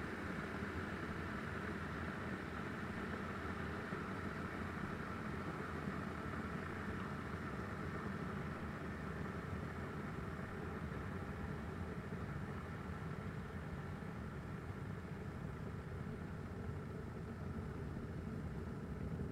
Pégairolles-de-l'Escalette, France - bouilloire
henry café matin chauffer eau siffle
23 August 2013